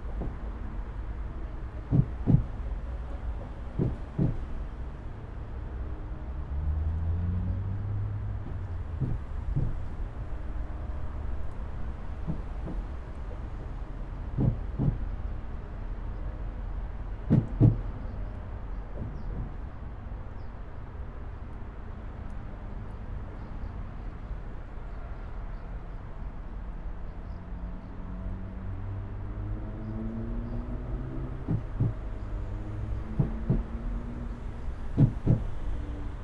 Standing under Vytautas The Great bridge in Kaunas. Dripping water from above...
Kauno miesto savivaldybė, Kauno apskritis, Lietuva